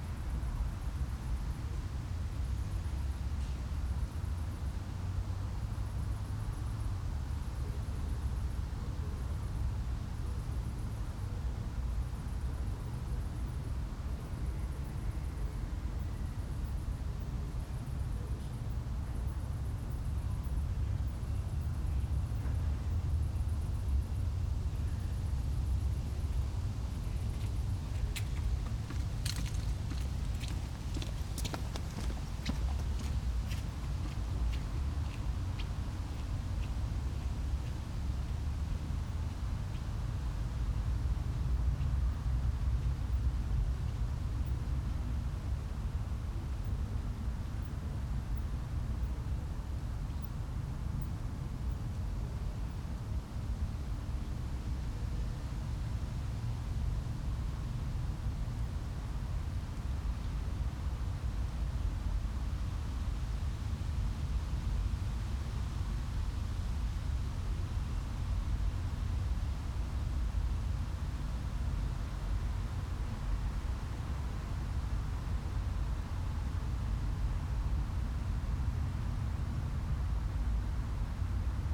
Ackerstraße, Berlin - Cemetary at night. Crickets, passers-by, qiet traffic, wind in the trees, distant tram.
[I used an MD recorder with binaural microphones Soundman OKM II AVPOP A3]
Deutschland, European Union